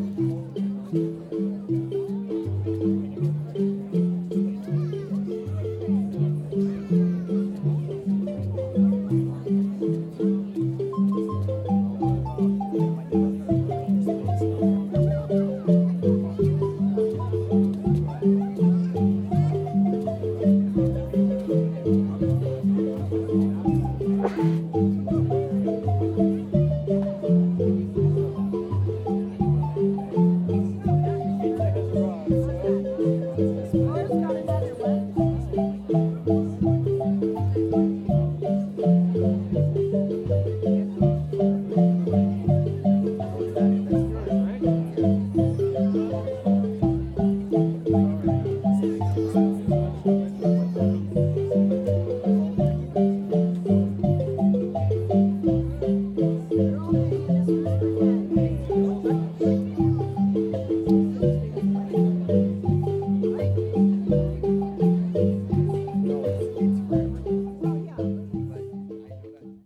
The family's pizza order is called out. Hornby Island's Amani Marimba band entertains a large mellow crowd.